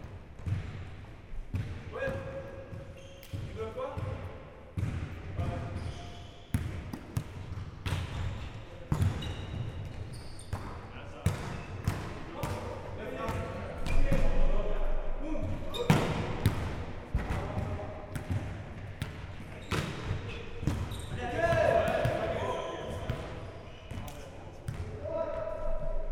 Mont-Saint-Guibert, Belgique - Centre sportif
This is the sportive hall of Mont-Saint-Guibert. This wide hall is used by two villages. This is a great place for sports. Here, a few people are playing volley-ball, as training.